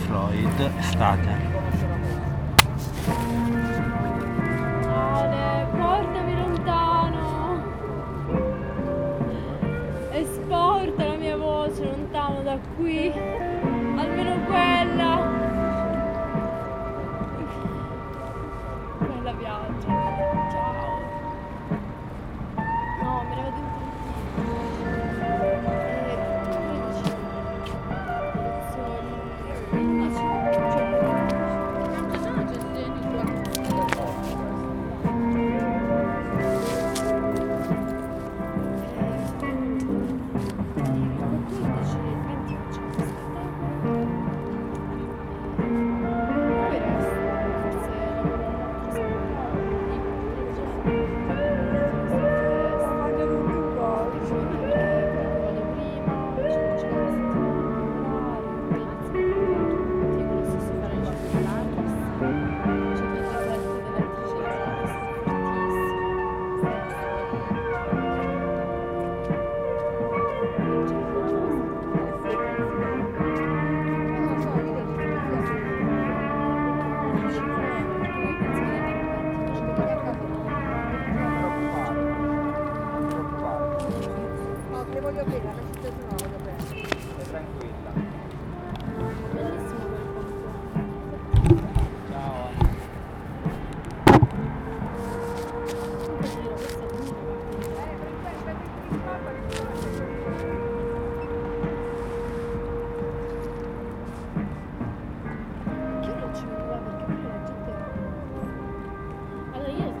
Taranto, Province of Taranto, Italy - Conversation on leaving and be elsewhere
Pink Floyd played back by a posh cafe' by the sea.
27 June 2012